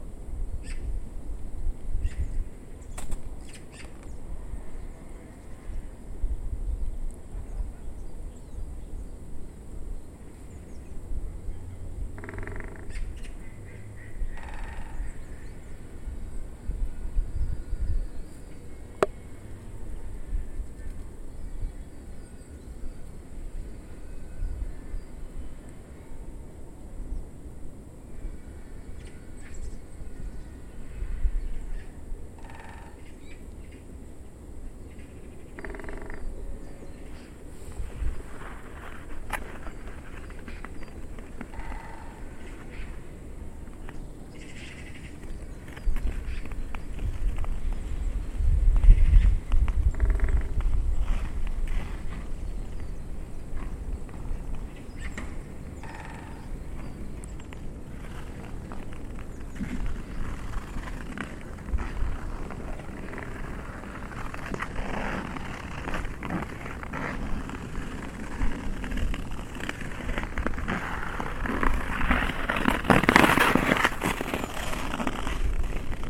Thomayerovy Sady, soundscape with icescaters
winter days with woodpecker and poeple scating on the Vltava river
prague favourite sounds